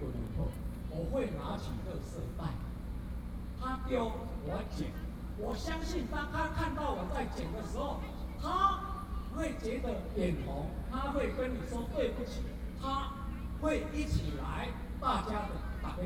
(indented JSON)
{
  "title": "Zhongzheng, Taipei City, Taiwan - Speech",
  "date": "2013-05-26 18:40:00",
  "description": "Antinuclear, Next to the protesters in the Legislative Yuan, Zoom H4n+ Soundman OKM II",
  "latitude": "25.04",
  "longitude": "121.52",
  "altitude": "20",
  "timezone": "Asia/Taipei"
}